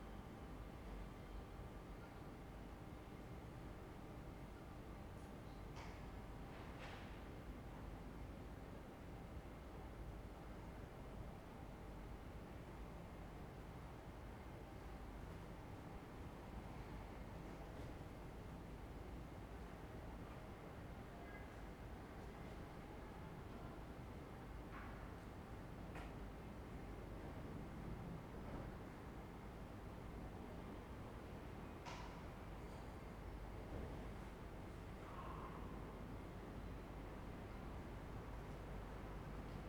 Ascolto il tuo cuore, città, I listen to your heart, city. Several chapters **SCROLL DOWN FOR ALL RECORDINGS** - Sunny Sunday without students and swallows voices in the time of COVID19 Soundscape
"Sunny Sunday without students and swallows voices in the time of COVID19" Soundscape
Chapter CXVII of Ascolto il tuo cuore, città, I listen to your heart, city.
Sunday, July 12th 2020. Fixed position on an internal terrace at San Salvario district Turin, one hundred-three days after (but day forty-nine of Phase II and day thirty-six of Phase IIB and day thirty of Phase IIC and day 7th of Phase III) of emergency disposition due to the epidemic of COVID19.
Start at 6:51 p.m. end at 7:51 p.m. duration of recording 01:00:00.
Compare: same position, same kind of recording and similar “sunset time”:
n. 50, Sunday April 19th: recording at 5:15 p.m and sunset at 8:18 p.m.
n. 100, Sunday June 7th: recording at 6:34 p.m and sunset at 9:12 p.m.
n. 110, Sunday June 21st: recording at 6:42 p.m and sunset at 9:20 p.m.
n. 117, Sunday July 12th: recording at 6:50 p.m and sunset at 9:18 p.m.